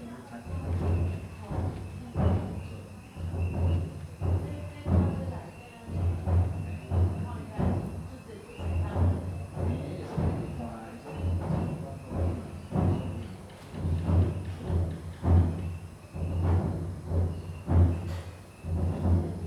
In the temple square
Zoom H2n MS+XY